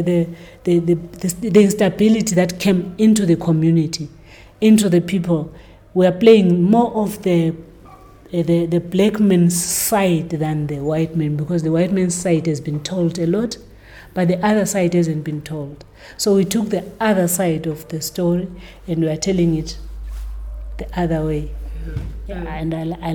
I had been witnessing Thembi training a group of young dancers upstairs for a while; now we are in Thembi’s office, and the light is fading quickly outside. Somewhere in the emptying building, you can still hear someone practicing, singing… while Thembi beautifully relates many of her experiences as a women artist. Here she describes to me her new production and especially the history it relates…
Thembi Ngwabi was trained as an actress at Amakhosi and also become a well-known bass guitarist during her career; now she’s training young people as the leader of the Amakhosi Performing Arts Academy APAA.
The complete interview with Thembi Ngwabi is archived at:
29 October 2012, ~18:00